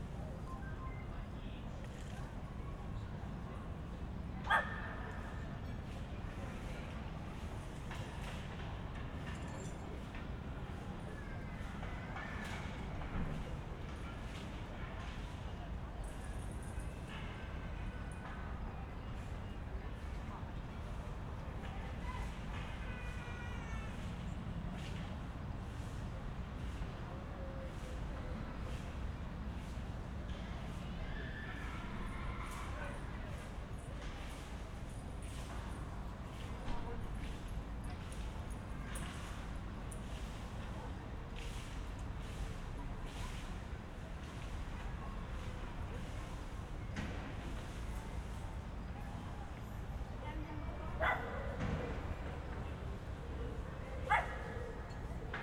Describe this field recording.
soundscape within half circle of gropiushaus